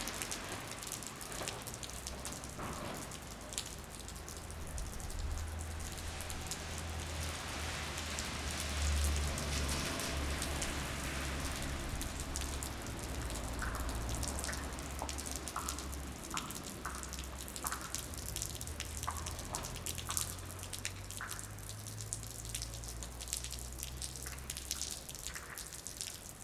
rain and melting snow, water drops from the roof on the sidewalk.